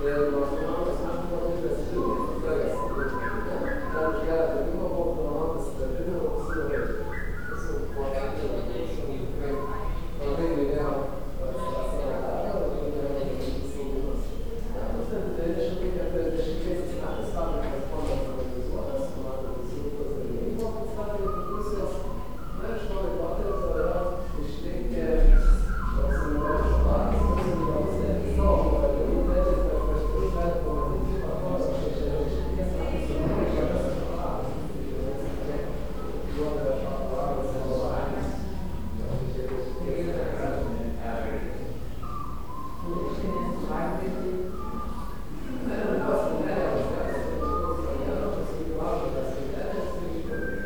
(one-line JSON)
{"title": "Siauliai, Lithuania, in the museum of chocolate", "date": "2014-10-06 18:20:00", "description": "chocolate museum, main room", "latitude": "55.93", "longitude": "23.31", "altitude": "128", "timezone": "Europe/Vilnius"}